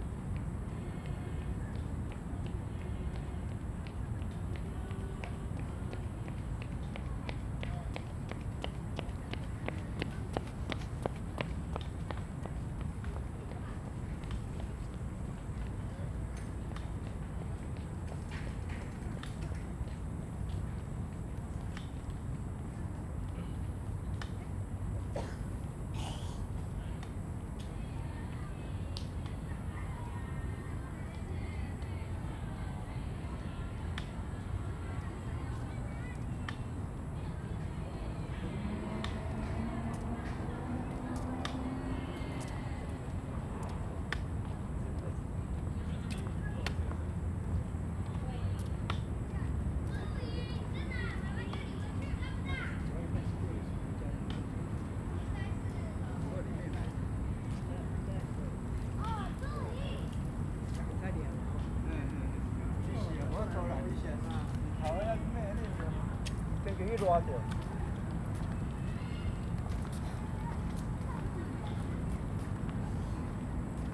大安森林公園, 大安區 Taipei City - Night in the park
in the Park, Traffic noise
Zoom H2n MS+XY